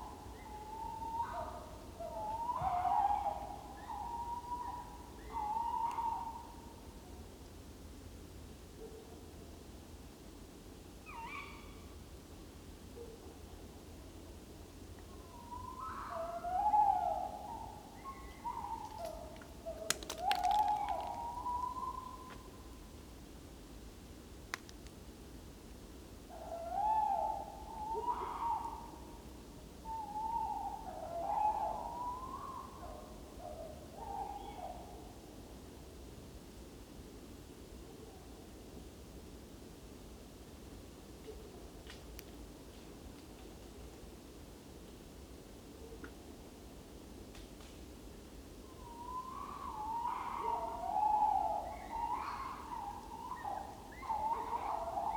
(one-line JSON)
{"title": "Negast forest, Schupperbaum, Rügen - Owl [Waldkauz] concerto #3", "date": "2021-11-12 00:05:00", "description": "Waldkauzes in the autumn woods - the higher pitched \"ki-witt\" is the female call - the classical \"huuu-huu\" is male owl - there seem to be more than 2?\novernight recording with SD Mixpre II and Lewitt 540s in NOS setup", "latitude": "54.37", "longitude": "13.28", "altitude": "14", "timezone": "Europe/Berlin"}